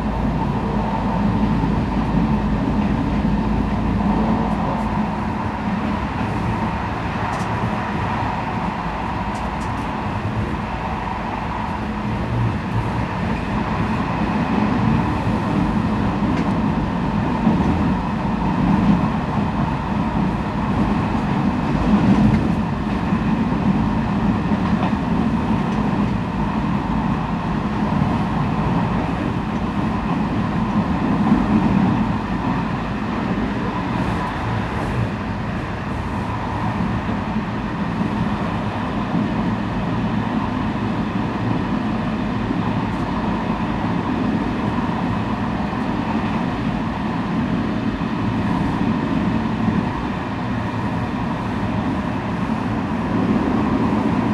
{"title": "Nantes-Lyon by train", "date": "2000-01-01 11:23:00", "description": "Minidisc recording from 2000, january 1st.", "latitude": "47.26", "longitude": "-1.45", "altitude": "12", "timezone": "Europe/Paris"}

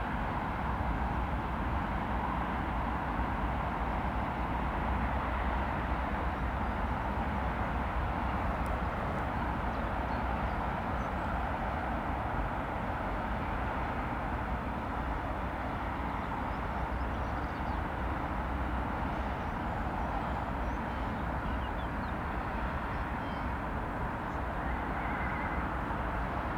{"title": "From the middle of the bridge, Strakonická, Velká Chuchle-Barrandov, Czechia - From the middle of the bridge", "date": "2022-04-09 18:10:00", "description": "As well as a singletrack railway line there is a public footpath across this bridge. From here there are fantastic views of the river Vltava and the extraordinary, transport dominated, soundscape is extremely loud. The spectacular valley geography concentrates all the major transport systems – road, rail and tram – into this one bottleneck so they all run close to and parallel with the river. The roads are continuously busy creating a constant roar of traffic that fills the valley with sound. It seems even louder high up, as on this bridge or from the surrounding hillsides. In fact, when standing mid bridge one hears almost nothing but the immersive traffic, except when planes thunder directly above to land at Prague airport or when occasional trains power past only two meters from your ears. The contrast between the expansive views and the overwhelming soundscape is extreme.\nIn former times Braník Bridge was known as the Bridge of the Intelligentsia.", "latitude": "50.03", "longitude": "14.40", "altitude": "195", "timezone": "Europe/Prague"}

